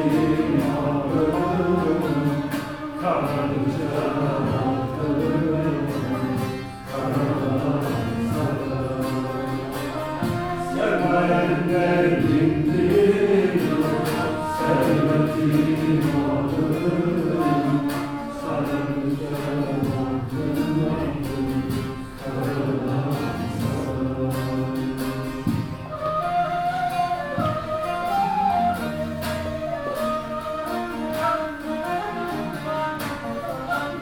Last song… the audience can’t help joining in…
Poems recited by Güher Karakus and Uwe Westerboer;
music by Kenan Buz and Gün Acer.
The event was part of the city’s Intercultural Weeks.
12 September 2014, ~21:00